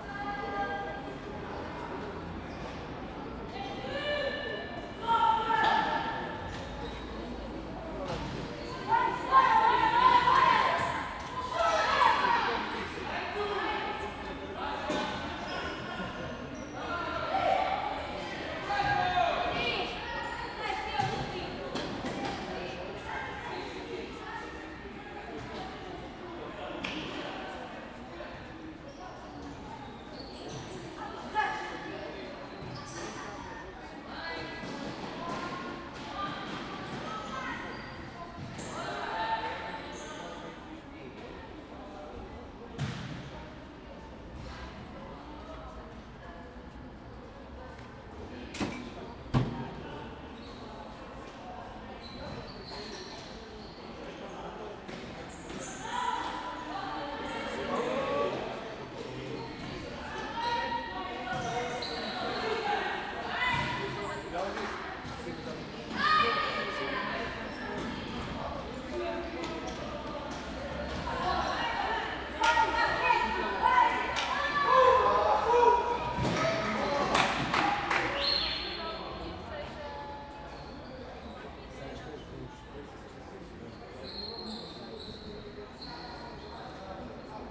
Campolide, Portugal - Jogo da bola
Jogo de futebol gravado no campo do Liberdade Atlético Clube, no Bairro da Liberdade, Lisboa.